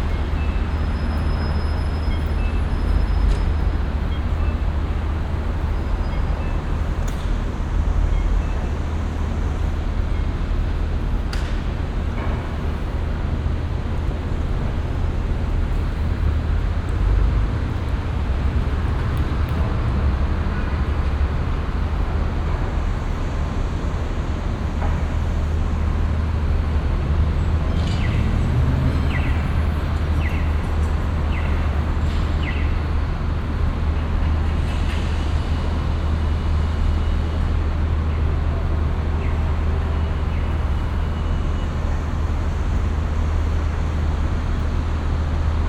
vancouver, west hastings, seymour round architecture - vancouver, west hastings, seymour - round architecture
voices and street sound in a refelective round architecture roof construction - made of glass and metall
soundmap international
social ambiences/ listen to the people - in & outdoor nearfield recordings